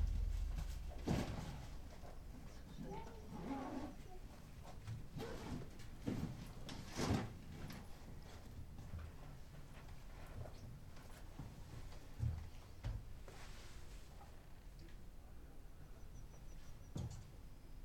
Wachendorf, Bruder-Klaus-Kapelle
05.07.2008, 15:30
im inneren der bruder-klaus-kapelle, besucher, geflüster, stille.
Feldkapelle für den Heiligen Bruder Klaus, gebaut von Peter Zumthor, Hof Scheidtweiler, Mechernich-Wachendorf, eingeweiht am 19. Mai 2007.
Deutschland, July 2008